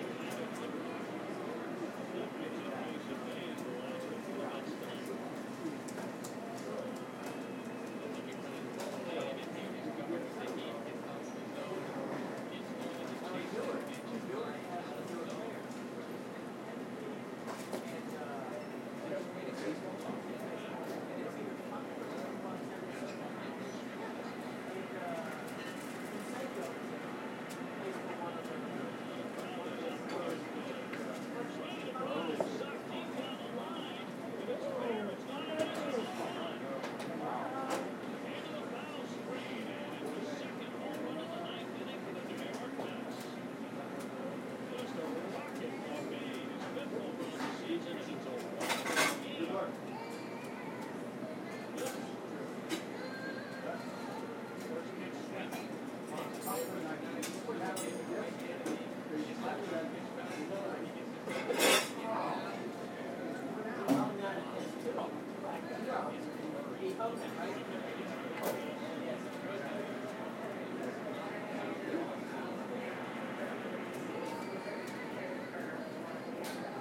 Chicago O'Hare International Airport (ORD), Bessie Coleman Dr, Chicago, IL, USA - Stefani's Tuscan Café ambience
World Listening Day recording of the café ambience before boarding